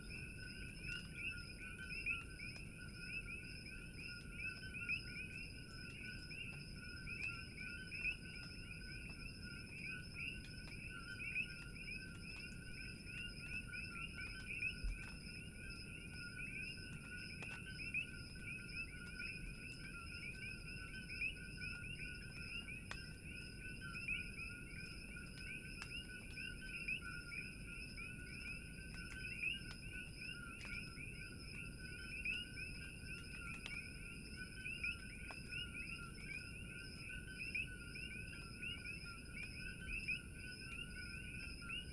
sound of Coqui's -tree frogs- recorded in February 2008 on east side of Big Island Hawaii ... Ten years ago they still weren't at this location, to my knowledge they are spread now throughout the island and treated as a pest...it is interesting to realise how fast a sound can ended up being a landmark, associated with certain location
Kapoho area, 22 February 2009, 03:00